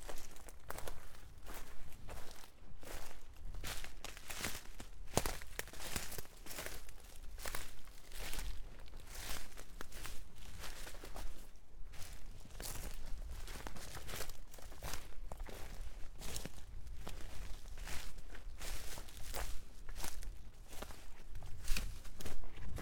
Rijeka, Croatia, Drenova Forest - Just Walking